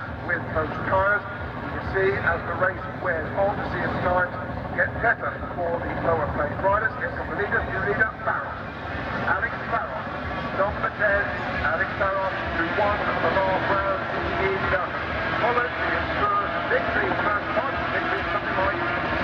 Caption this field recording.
500cc motorcycle race ... part one ... Starkeys ... Donington Park ... the race and all associated crowd noise etc ... Sony ECM 959 one point stereo mic to Sony Minidisk ...